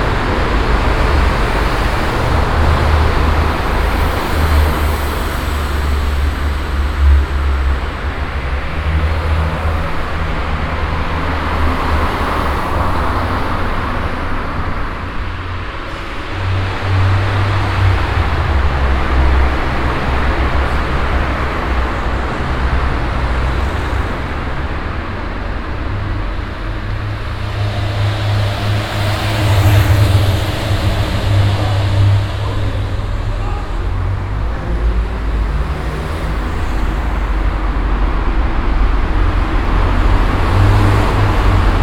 cologne, kalker hauptstraße, traffic under bridge
soundmap nrw: social ambiences/ listen to the people in & outdoor topographic field recordings